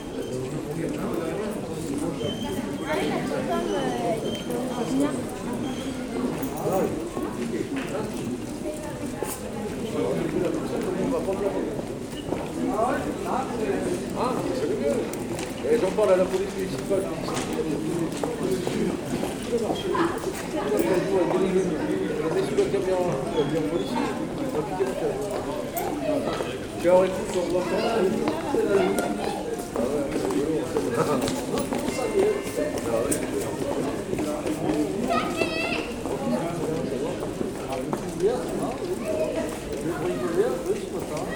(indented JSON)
{
  "title": "Chartres, France - Street ambiance",
  "date": "2018-12-31 16:00:00",
  "description": "Rue Noël Ballay - This artery is one of the main shopping streets of the city. Walkers go quietly, sometimes with rather amused discussions. A tramp and his dog wait in front of an establishment. Everyone knows the guy, this causes him plays nothing and chats a lot. But on the other hand, as soon as he begins to play the guitar, and to... whistle (we will describe the sound like that), we quickly run away !",
  "latitude": "48.45",
  "longitude": "1.49",
  "altitude": "162",
  "timezone": "Europe/Paris"
}